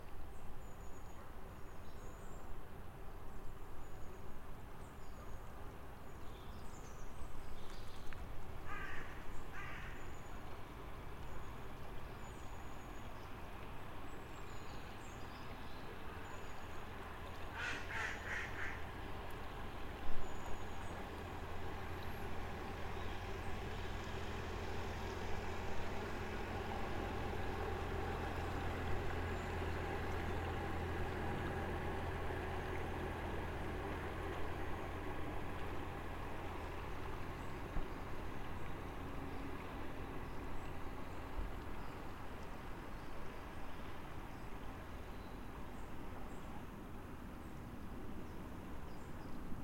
Narrowboat on the River Lea
Narrowboat passing by on the River Lea
Hoddesdon, Essex, UK